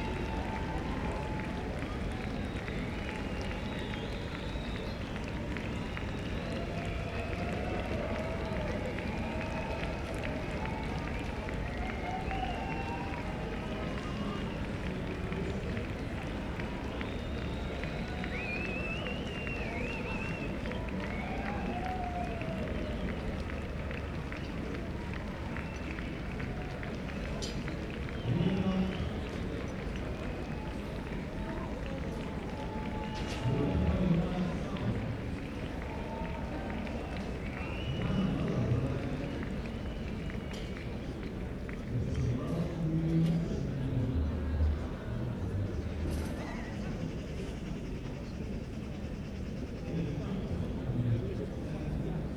berlin, john-foster-dulles-allee: haus der kulturen der welt, garten - the city, the country & me: garden of house of the cultures of the world
in the garden during a concert of giant sand at wassermusik festival
the city, the country & me: august 5, 2011
Berlin, Germany, 5 August 2011, ~21:00